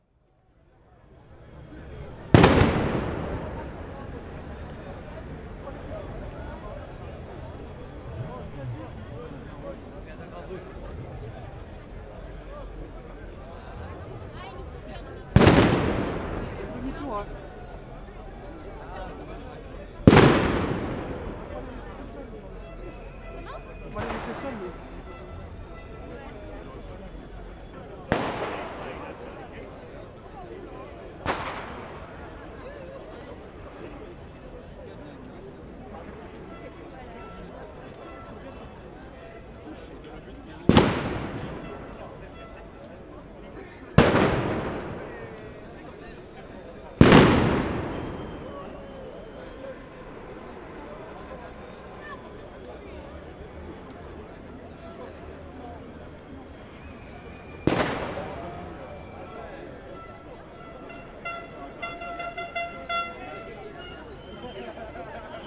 Cr Victor Hugo, Saint-Étienne, France - St-Etienne (42000)
St-Etienne (42000)
Manifestation des "Gilets Jaunes"
France métropolitaine, France, December 2018